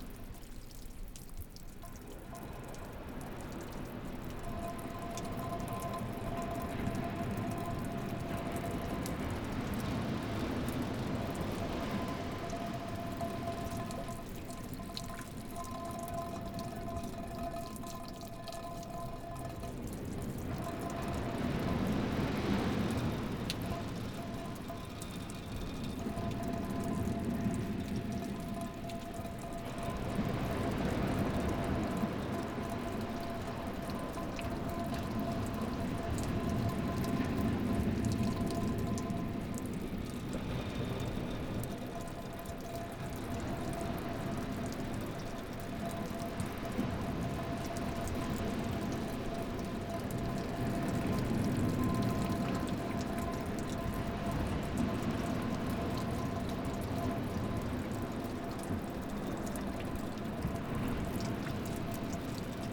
Kilkeel Beach 5
Another water stream, hitting on a can